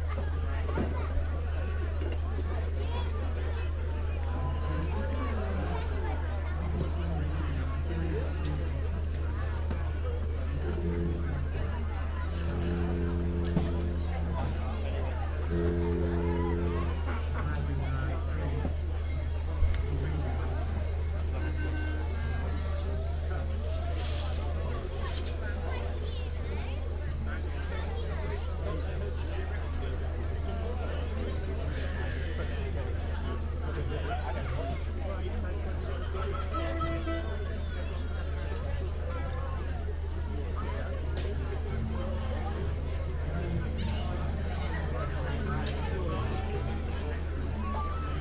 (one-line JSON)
{"title": "The May Fayre, The Street, South Stoke, UK - The concert band warming up", "date": "2017-05-01 12:51:00", "description": "This is the sound of the concert band warming up their instruments ahead of performing a variety of very jolly numbers to celebrate the 1st of May.", "latitude": "51.55", "longitude": "-1.14", "altitude": "47", "timezone": "Europe/London"}